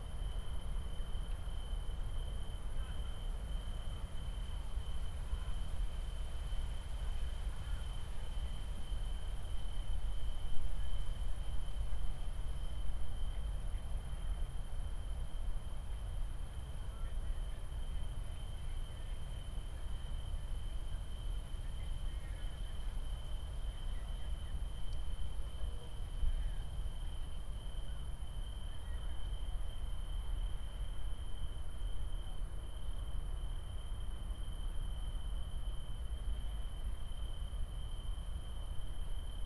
Stadtgarten, Köln - trains and tree crickets
radio aporee ::: field radio - an ongoing experiment and exploration of affective geographies and new practices in sound art and radio.
(Tascam iXJ2 / iphone, Primo EM172)
Köln, Germany, 30 July 2019